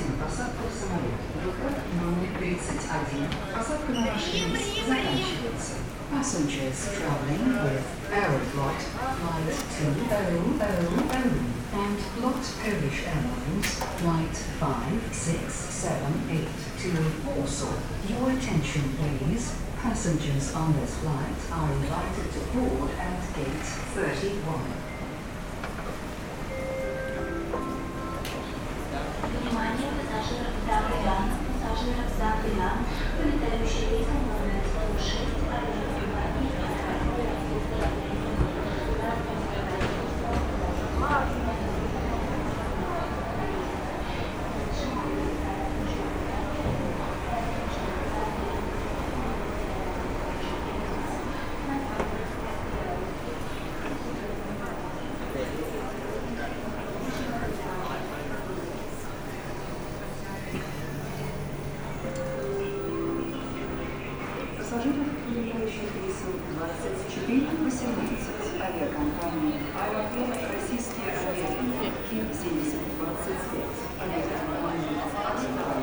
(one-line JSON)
{"title": "Khimki Sheremetyevo airport, Russia - Sheremetyevo airport part 1", "date": "2018-09-15 14:15:00", "description": "Soundscape of the Moscow Aeroflot airport Sheremetyevo. This field recording lets you travel freely in the airport, listening to the special sounds you can hear in this kind of place. Recorded without interruption on September 15, 2018, 14h15 to 15h15. Walking from the A terminal to the F terminal.", "latitude": "55.96", "longitude": "37.41", "altitude": "190", "timezone": "Europe/Moscow"}